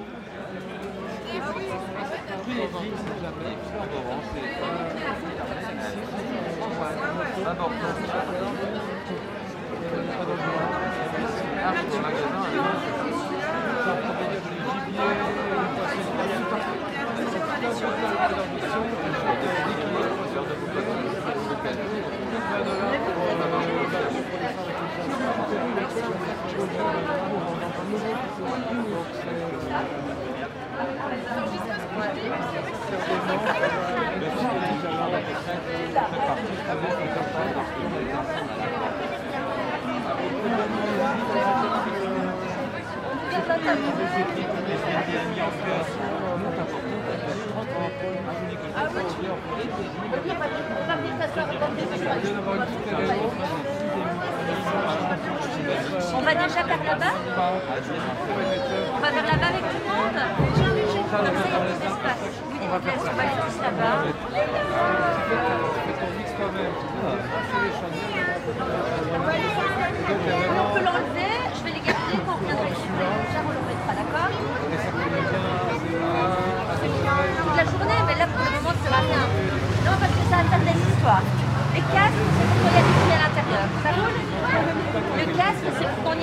Super Fourchette, Rue des Hirondelles, Bruxelles, Belgique - Conversations in the street before a concert
Tech Note : Sony PCM-D100 internal microphones, XY position.